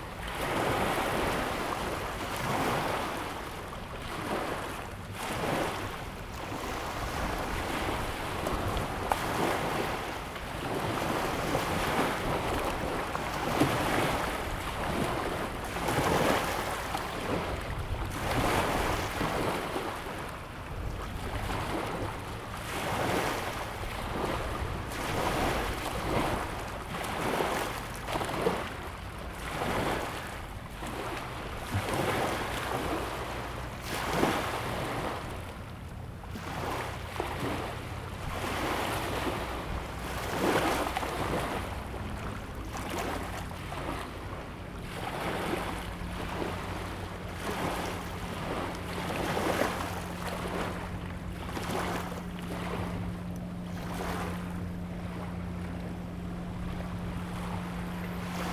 成功漁港, Chenggong Township - Sound of the waves
Sound of the waves, The weather is very hot
Zoom H2n MS +XY
6 September 2014, 15:11